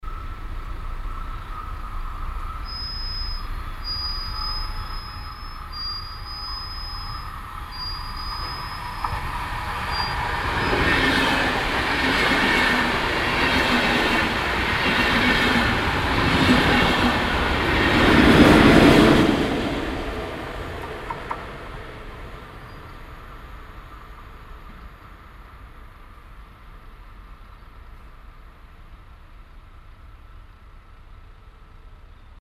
zugeinfahrt, mittags
- soundmap nrw
project: social ambiences/ listen to the people - in & outdoor nearfield recordings
bahnlinie, nahe güterbahnhof